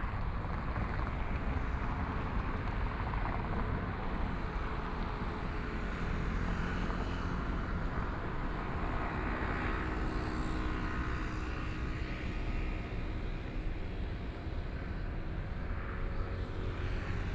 Jiexing 1st St., Gushan Dist. - walking on the Road
Traffic Sound
Sony PCM D50+ Soundman OKM II